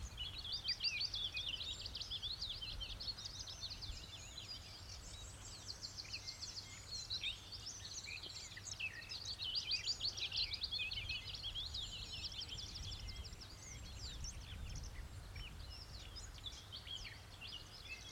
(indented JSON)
{
  "title": "early morning, Co. Clare, Ireland",
  "date": "2013-05-17 06:00:00",
  "description": "(sort of) morning chorus, wandering in a field",
  "latitude": "52.92",
  "longitude": "-9.22",
  "altitude": "68",
  "timezone": "Europe/Dublin"
}